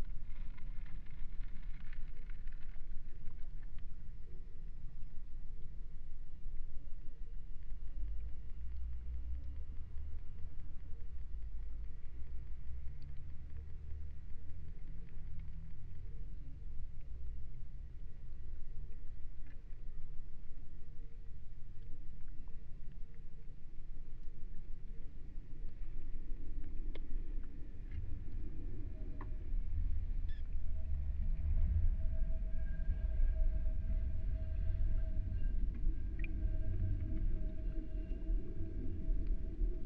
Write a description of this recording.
Mic/Recorder: Aquarian H2A / Fostex FR-2LE